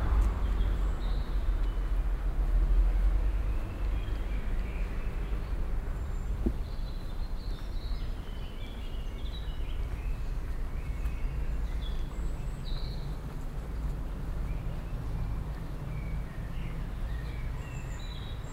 stereofeldaufnahmen im mai 08 - mittags
project: klang raum garten/ sound in public spaces - outdoor nearfield recordings